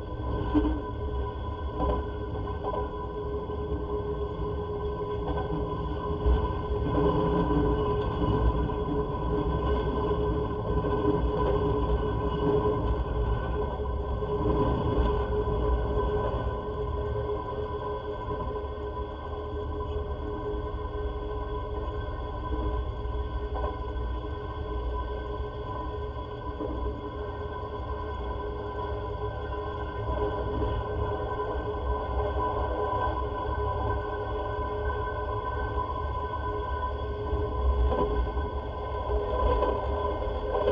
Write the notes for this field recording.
departure, train sounds recorded with contact mic